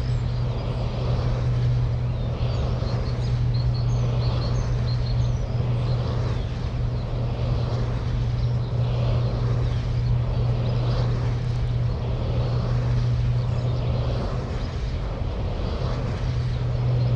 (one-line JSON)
{"date": "2021-03-23 06:33:00", "description": "The first bird to sing this morning is a distant skylark.", "latitude": "52.69", "longitude": "13.64", "altitude": "77", "timezone": "Europe/Berlin"}